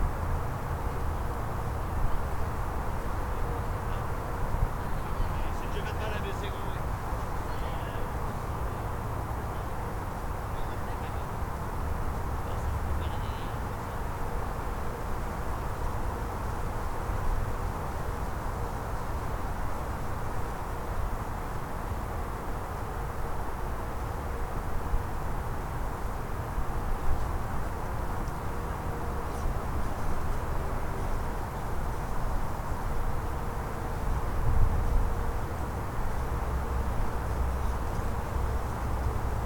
Montreal: Falaise Saint-Jacques (Turcot Int.) - Falaise Saint-Jacques (Turcot Int.)
equipment used: Korg Mr 1000
The Falaise is a patch of green hill that runs along the boundary of NDG. It has been played on for years by residents. Once construction of the remodeled Turcot Int. is complete, access to this green space will be severly or totally comprimised. As it happens, I was able to record a father with his two kids scalling the hill, if you listen closely you can hear the man say that he used to play there 30 years ago.